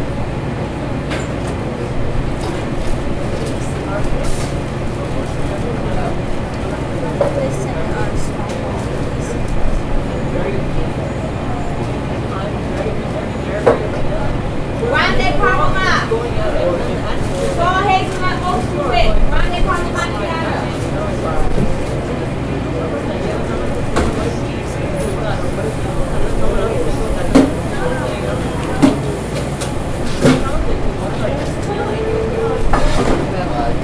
Capturing the chaos of Starbucks by placing the recorder near the ordering station.